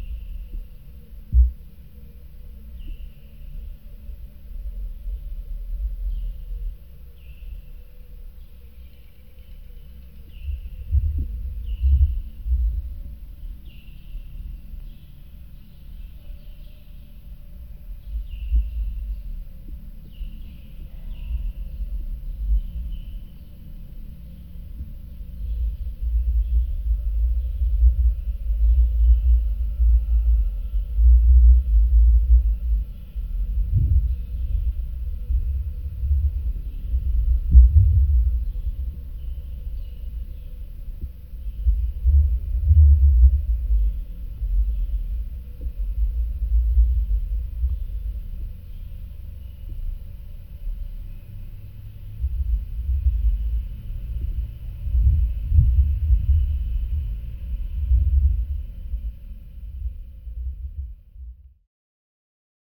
Georgioupoli, Crete, a fence
the sounscape caught on a fence with contact microphones
3 May 2019, 16:45